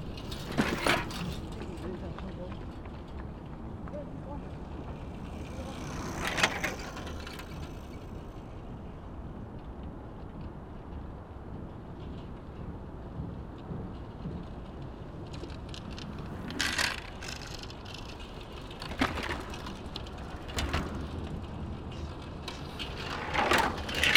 During the rush hour, no need to search the cars, there's very few. In Copenhagen, the rush hour is simply a downpour of bikes. It's of course very pleasant. Sound of the bikes on a pedestian and cycling bridge.